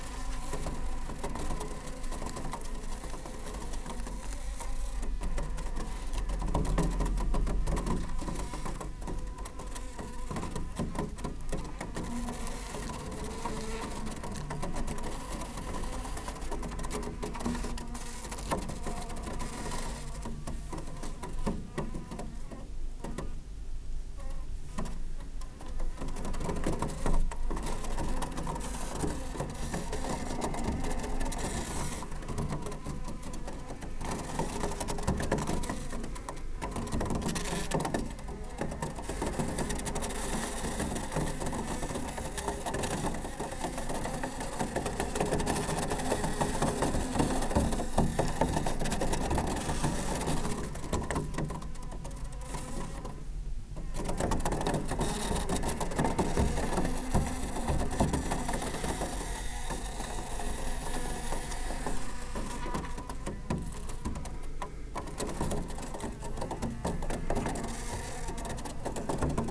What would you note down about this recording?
a bunch of fruit flies trapped under a plastic wrap. roland r-07